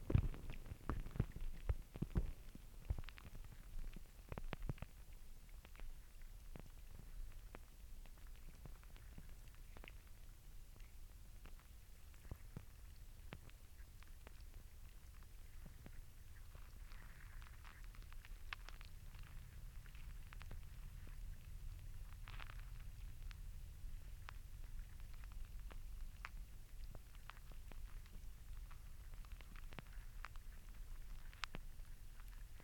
Spankerenseweg, Leuvenheim, Netherlands - Soerensebeek
2x Hydrophones underwater. Water stuff, footsteps and aeroplane.